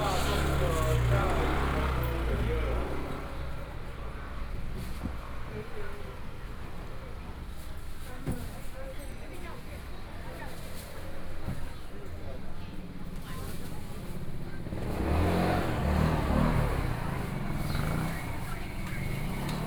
第二果菜批發市場, Taipei City - Wholesale
walking in the Fruit and vegetable wholesale market, Traffic Sound
Binaural recordings
27 February, ~07:00